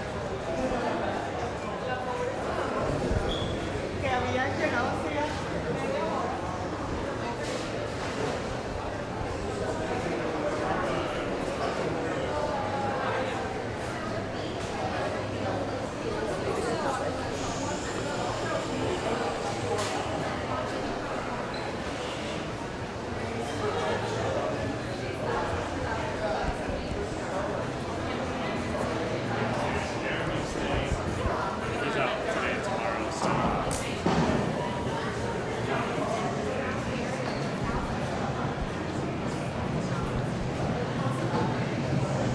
A part of the San Francisco Ferry Building's soundscape. Captured by slowly walking from end to end of the building, focusing largely on voices, but encountering other unexpected sounds. Recorded with a Zoom Audio Recorder.